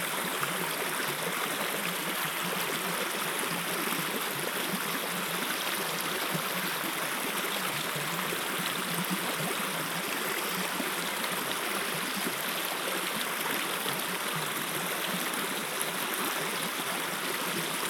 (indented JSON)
{
  "title": "River Lowther - Water gurgling in shallow river",
  "date": "2021-08-30 14:33:00",
  "latitude": "54.55",
  "longitude": "-2.74",
  "altitude": "179",
  "timezone": "Europe/London"
}